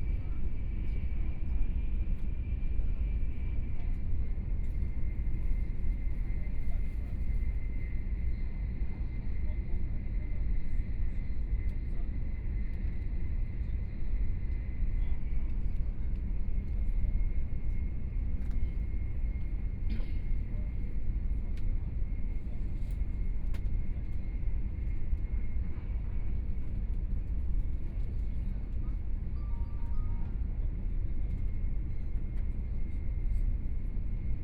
Yangmei City, Taoyuan County - Taiwan High Speed Rail
Taiwan High Speed Rail, from Taoyuan Station to Hsinchu Station, Binaural recordings, Zoom H4n+ Soundman OKM II
Hukou Township, Hsinchu County, Taiwan, 30 January 2014, 19:15